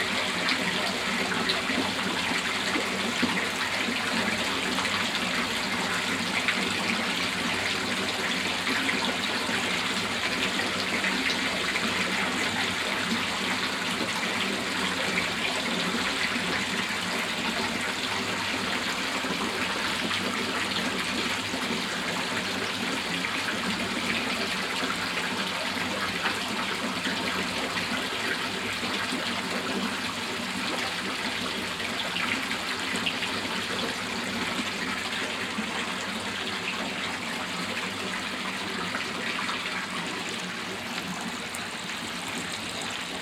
Heinerscheid, Luxemburg - Kalborn, Kalborn Mill, fish basin
An der Kalborner Mühle in einem alten Gebäudeteil der historischen Mühle, der als Standort für die zur Muschelverbreitung benötigten Fischbecken genutzt wird.
Inside an old building part of the historical mill, that is now used to place fish basins. The fish are needed to ring back the mussels into the river water.